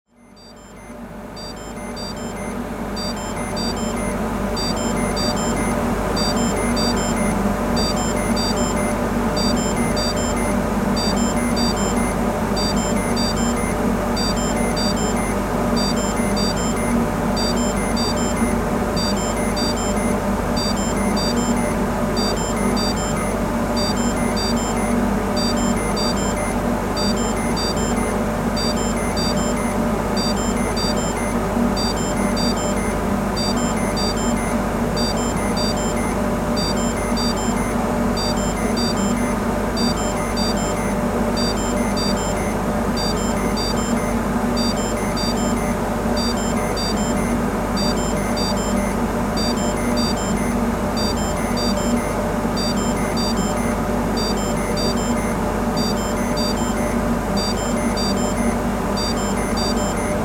Mont-Saint-Guibert, Belgique - The dump
This factory is using biogas in aim to produce energy. Gas comes from the biggest dump of Belgium. Recording of an installation set in alarm, because of a boiler shutdown.